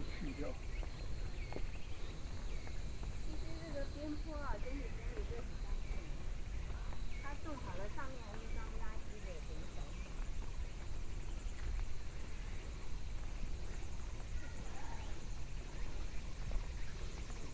walking in the Park, birds song, Sony PCM D50 + Soundman OKM II
11 September 2013, ~10:00